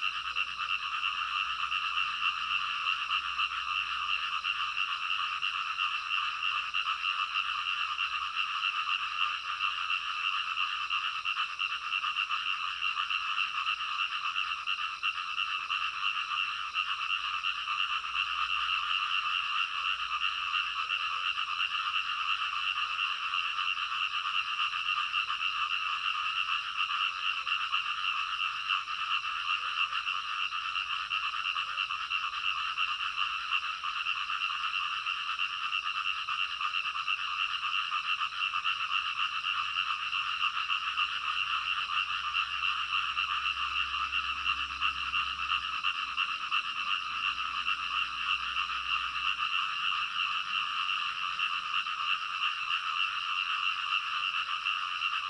Frogs chirping, Early morning
Zoom H2n MS+XY